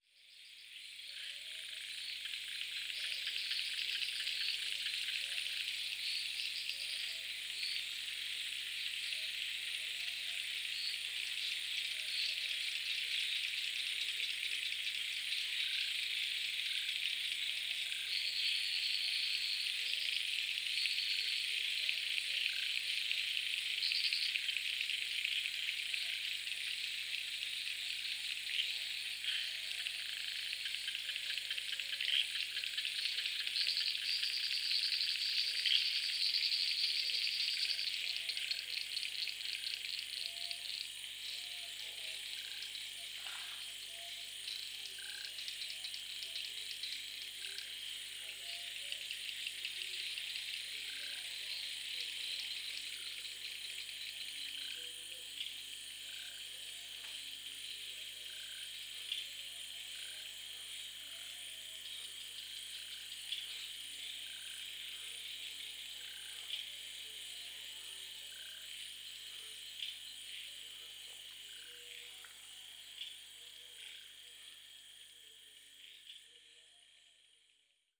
Koforidua, Ghana - Suburban Ghana Soundscapes 4: the Pond
A part of field recordings for soundscape ecology research and exhibition.
Rhythms and variations of vocal intensities of species in sound. Hum in sound comes from high tension cables running near the pond.
Recording format: Binaural.
Recording gear: Soundman OKM II into ZOOM F4.
Date: 22.04.2022.
Time: Between 00 and 5 AM.